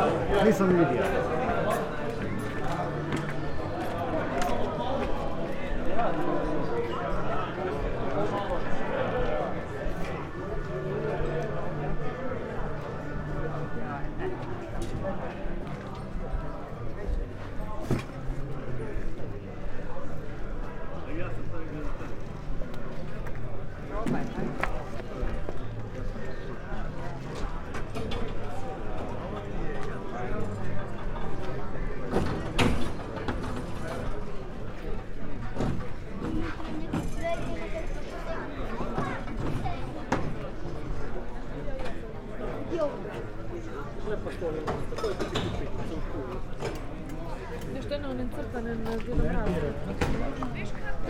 loggia, Oprtalj, Croatia - small talks
sunday, antique market, slowly walking through indoor (loggia) and outdoor ambiences, voices - small talks, bargaining, cars, moped, steps